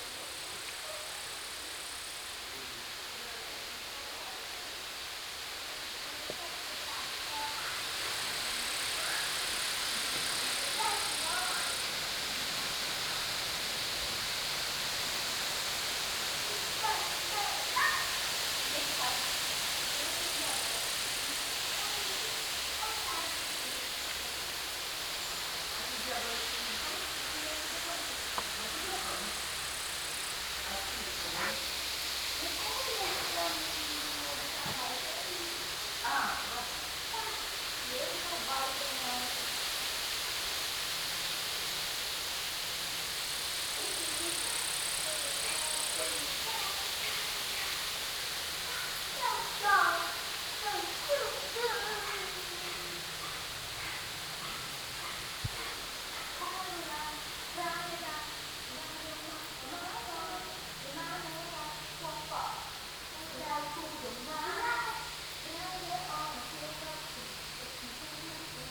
Vítr ve větvích, koník, lidé ve vile Rožana
powiat wałbrzyski, dolnośląskie, RP, 18 August 2019, 11:31